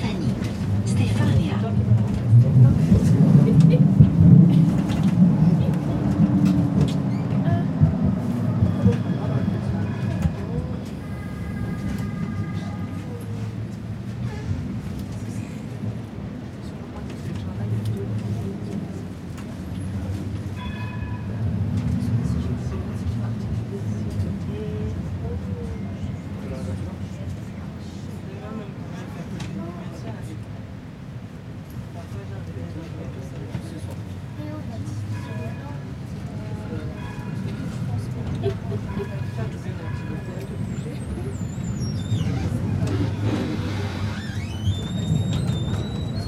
Tram, Bruxelles, Belgique - Tram 92 between Poelaert and Faider
Modern Tram.
Tech Note : Olympus LS5 internal microphones.